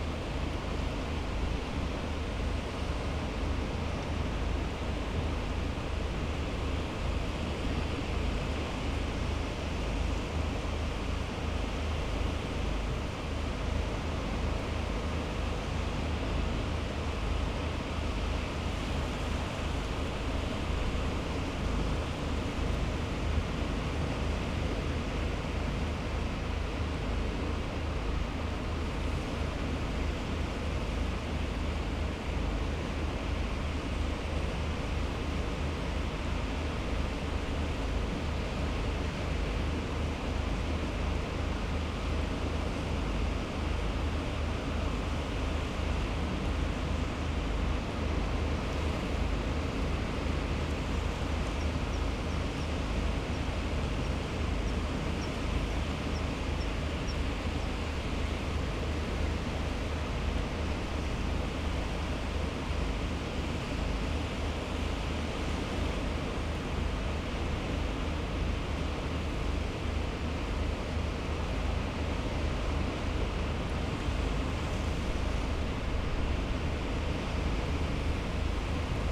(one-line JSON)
{"title": "Henrietta St, Whitby, UK - under the east cliff ... incoming tide ...", "date": "2019-05-17 11:10:00", "description": "under the east cliff ... incoming tide ... lavalier mics clipped to bag ... bird calls from ... fulmar ... herring gull ... rock pipit ... the school party wander back ... all sorts of background noise ...", "latitude": "54.49", "longitude": "-0.61", "altitude": "21", "timezone": "Europe/London"}